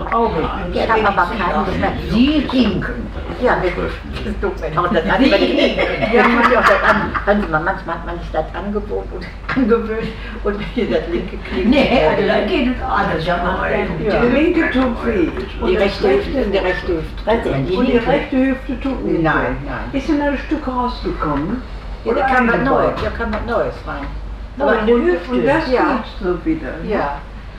haan, cafe karnstedt, konversationen - haan, cafe karnstedt, konversationen 02
gespräche unter älteren menschen im cafe karnstedt, nachmittags
soundmap nrw:
social ambiences, topographic fieldrecordings, listen to the people